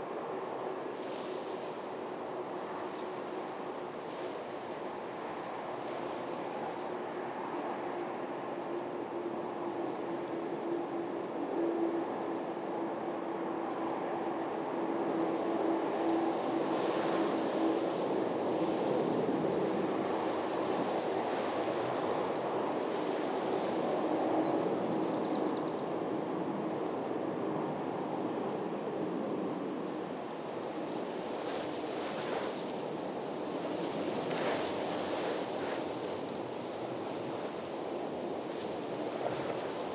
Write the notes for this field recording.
The shotgun microphone was placed close to the ground, facing towards the River Lea Navigation inside a blimp. The sound of aircraft, trains and traffic were particular dominant but also hidden beneath the city noises was the rustling and squeaking of straw. I tried to capture the effect of the wind by placing it closer to the ground and plants.